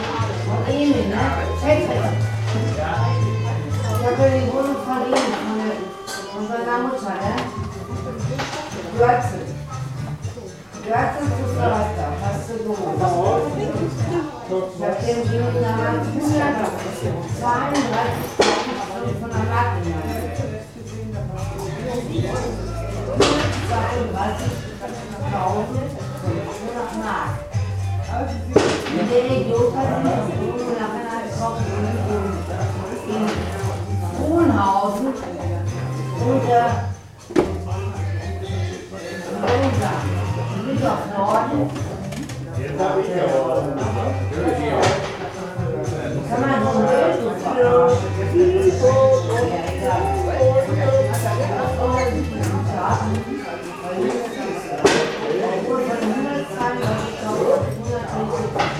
Rüttenscheid, Essen, Deutschland - ampütte
ampütte, rüttenscheider str. 42, 45128 essen
17 May 2010, 22:42